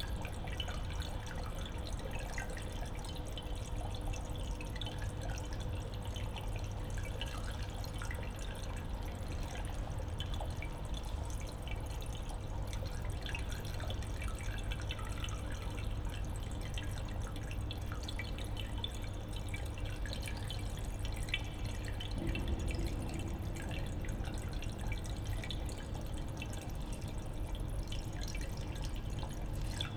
different microphone position. an emergency car appears on the scene.
(geek note: SD702 audio technica BP4025)
Berlin, Germany, 15 March 2012